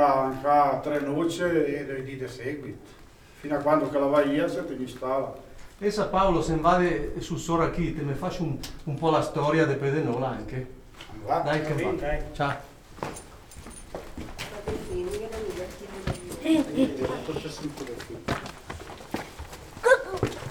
Valdidentro SO, Italia - stable stable
Bormio, Province of Sondrio, Italy, 19 August